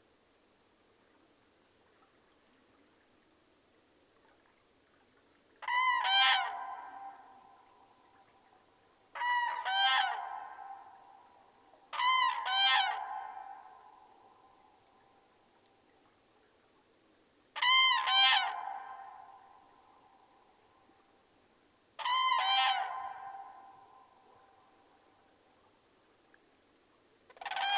Vyzuonos, Lithuania, the cranes
the cranes in the area of river Sventoji. recorded with diy parabolic mic
Utenos rajono savivaldybė, Utenos apskritis, Lietuva, 26 March